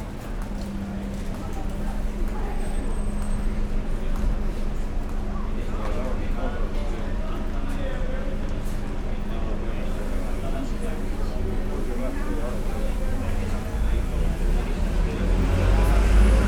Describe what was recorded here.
afternoon ambience in front of a typical coffee bar, (SD702, DPA4060)